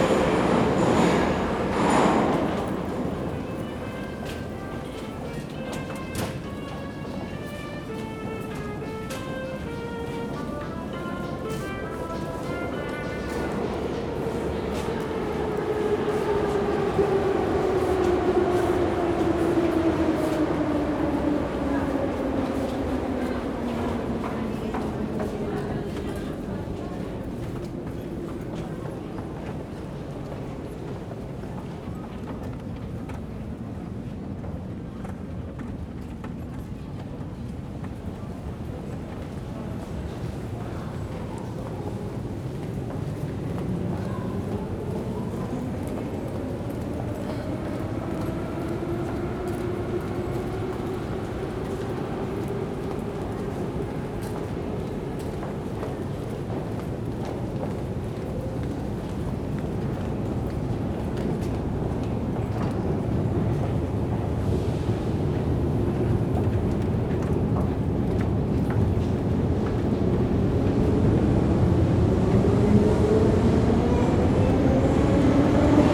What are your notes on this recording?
After a few stops of which the distance is about 5 minutes, FULL SPEED, we reach one of the incredilbly grand and impressively decorated stations and leave the train. nonstop you hear new trains arriving and leaving while we are taking the endless escalator up to the daylight.